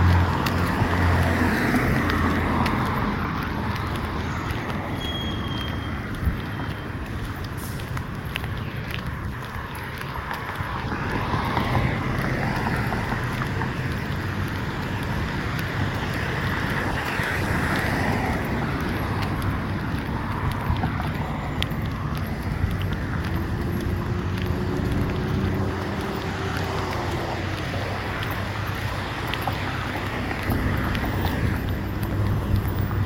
Ames, IA, USA - commercial district traffic